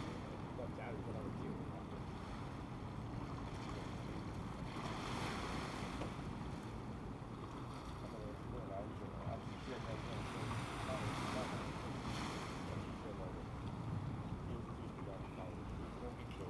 烏石鼻漁港, Taiwan - in the Fishing pier
Sound of the waves, in the Fishing pier, Thunder sound
Zoom H2n MS +XY
Changbin Township, Taitung County, Taiwan, 8 September 2014